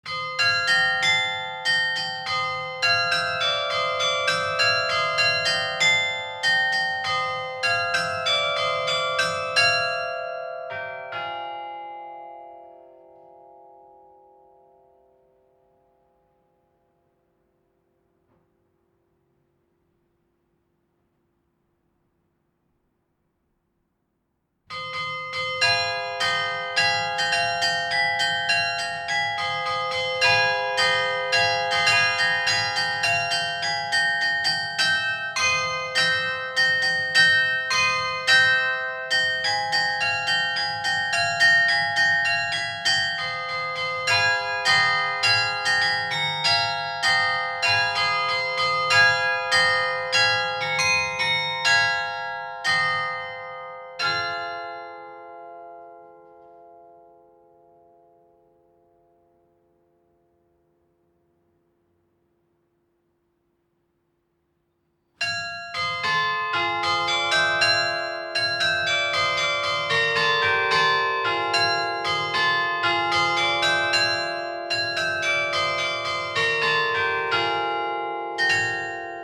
Carillon de l'abbatiale de St-Amand-les-Eaux - Carillon-St-Amand-les-Eaux - Ritournelles
4 ritournelles (quart-d'heure, demi-heure, trois-quart-d'heure et heure) interprétées par Charles Dairay, Maître carillonneur sur le carillon de la tour abbatiale de St-Amand-les-Eaux.
France métropolitaine, France, 5 May 2021